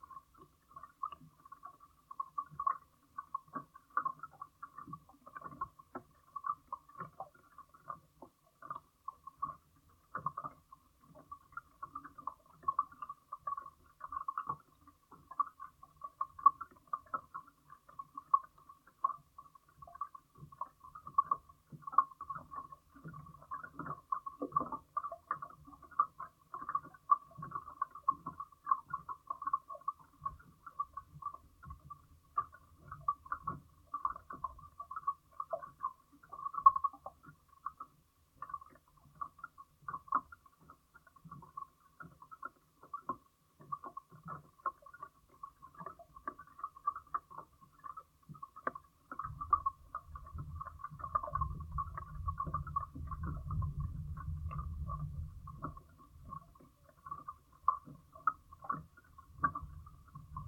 Floating Dock, Council Bluff Lake, Missouri, USA - Floating Dock
Contact mic attached to rubber pad lining side of floating dock in Council Bluff Lake. Council Bluff Lake is in Mark Twain National Forest in Iron County, Missouri. The lake was created when the Big River, a tributary of the Meramec River, was dammed.
8 November 2020, 12:42